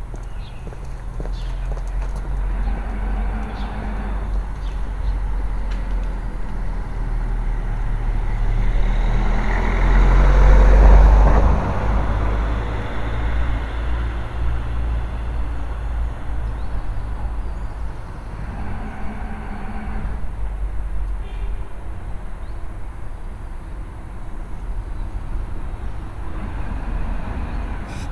Place François II, Nantes, France - An advertising board on a quiet Street
France métropolitaine, France, March 2021